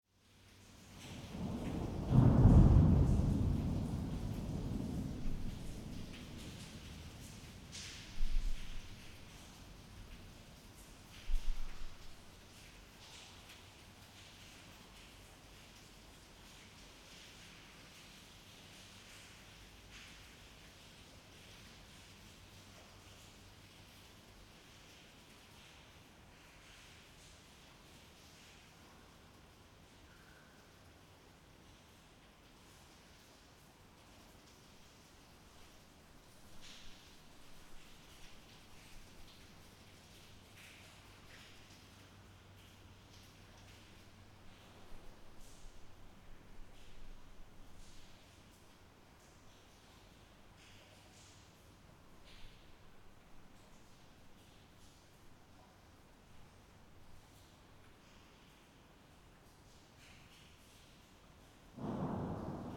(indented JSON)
{
  "title": "lipari, s.bartolomeo - thunder and rain",
  "date": "2009-10-19 09:15:00",
  "description": "thunderstorm, seeking shelter in the cathedral",
  "latitude": "38.47",
  "longitude": "14.96",
  "altitude": "37",
  "timezone": "Europe/Berlin"
}